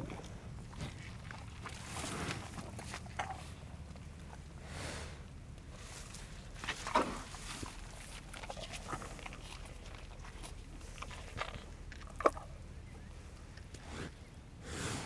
Yville-sur-Seine, France - Cow eating
A cow is eating apples we give. Gradually this cow is becoming completely crazy, as it likes apples VERY much. When we went back to the travel along the Seine river, this poor cow was crying loudly !